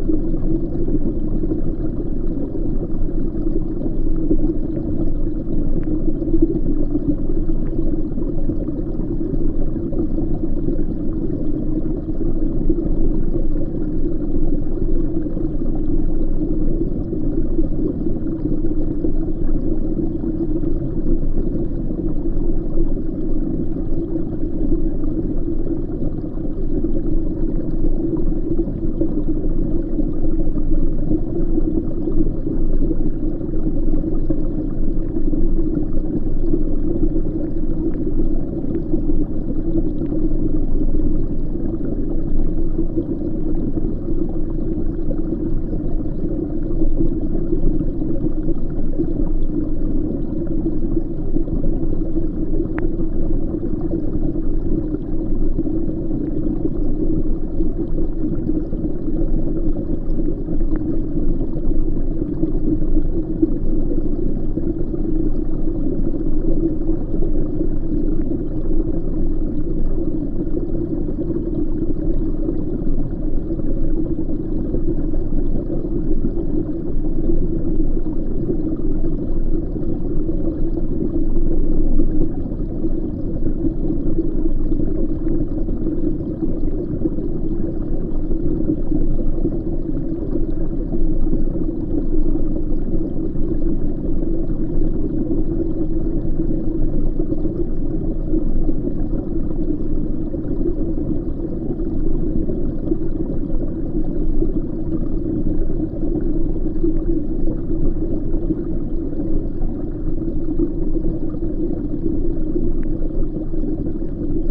river Viesa, Lithuania, dark perspective
Listening to the small river from the perspectives we do not hear naturally. Hydrophone under water and geophone contact on a branch fallen into water